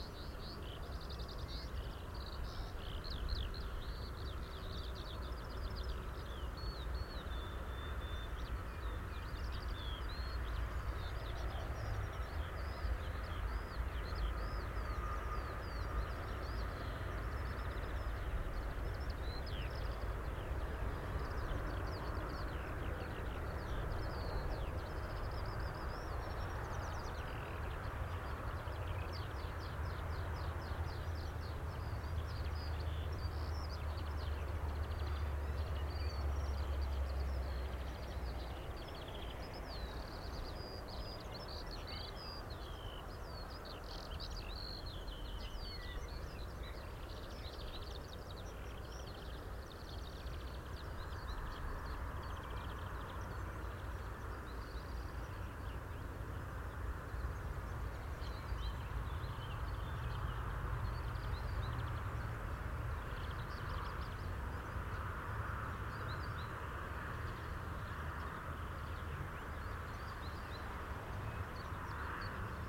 Weimar, Deutschland - westpunkt
SeaM (Studio fuer elektroakustische Musik) klangorte - WestPunkt
Germany, April 2012